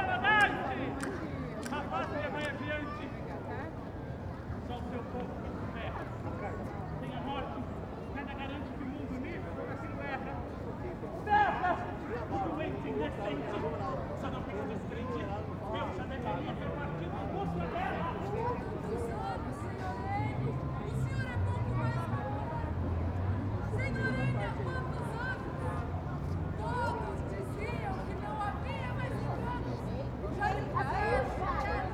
Calçadão de Londrina: Apresentação teatral: Praça Willie Davids - Apresentação teatral: Praça Willie Davids / Theatrical presentation: Willie Davids Square
Panorama sonoro: grupo apresentava uma peça teatral, em um sábado no início da tarde, como parte da programação da ação comercial “Londrina Liquida”. As falas dos atores e as músicas cantadas por eles não tinham auxílio de aparelhos amplificadores e, mesmo assim, destacavam-se dentre os sons do local. Ao entorno do grupo, muitas pessoas, dente elas crianças, acompanhavam a apresentação.
Sound panorama: group performed a theatrical play, on a Saturday in the afternoon, as part of the commercial action program "Londrina Liquida". The speeches of the actors and the songs sung by them did not have the aid of amplifying apparatuses and, nevertheless, they stood out among the sounds of the place. Around the group, many people, including children, followed the presentation.
Londrina - PR, Brazil, July 2017